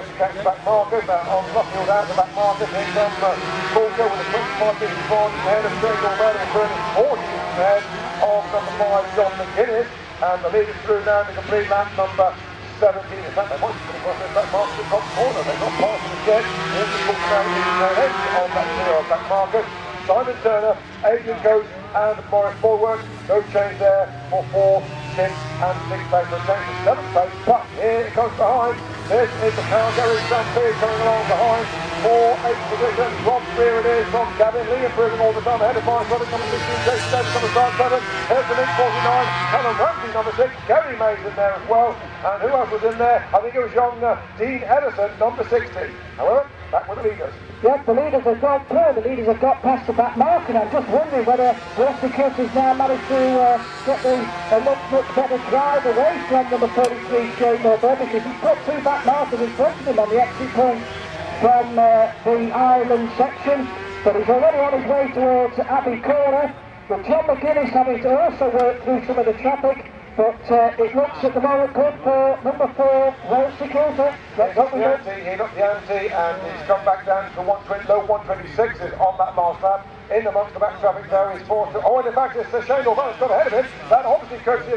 BSB 1998 ... 250 race ... commentary ... one point stereo mic to minidisk ... date correct ... time optional ... John McGuinness would have been a wee bit young ...
England, UK, September 1998